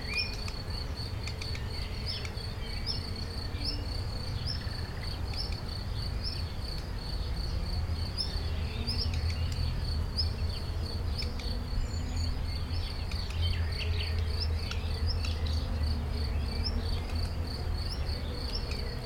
Rue de Vars, Chindrieux, France - Rallye lointain
Dans la campagne à Chindrieux, la cloche sonne, grillons, oiseaux et rallye de Chautagne en arrière plan.
Auvergne-Rhône-Alpes, France métropolitaine, France